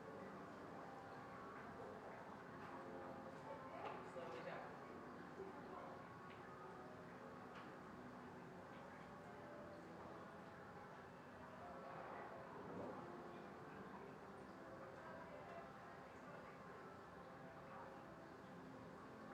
Soundscape from hostel window. Lazy sunday, ringing bells, talking, succussion of water from channel and other sound.